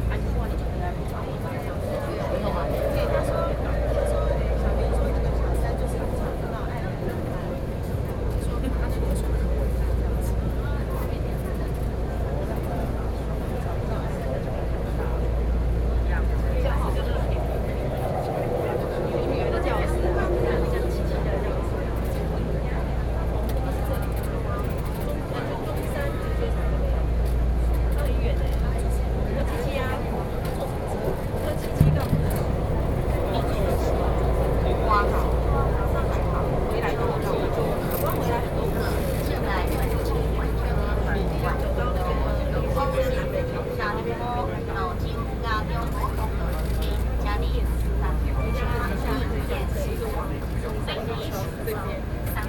Taipei, Taiwan - in the MRT train
Datong District, Taipei City, Taiwan, 27 October, 16:48